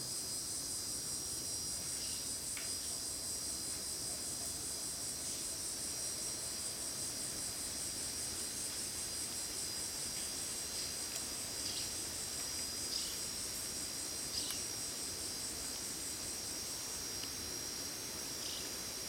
Vila Santos, São Paulo - State of São Paulo, Brazil - Trilha das FIgueias - iii
Cicadas and other birds at Parque da Cantareira
São Paulo - SP, Brazil, December 2016